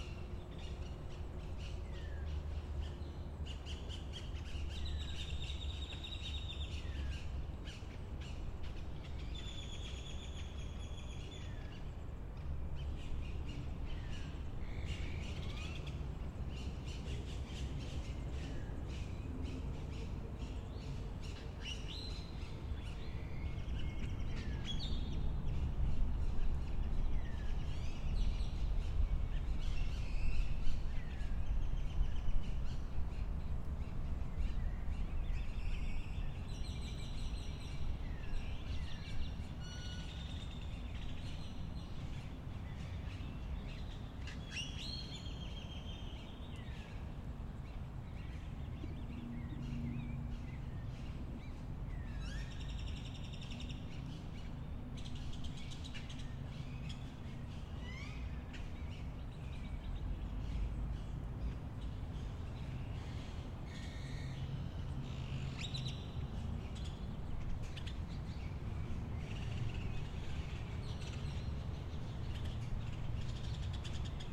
Av Acuario, Bosque de Chapultepec I Secc, Ciudad de México, CDMX, México - Bosque de Chapultepec - Lago
Lago del Bosque de Chapultepec. Lunes.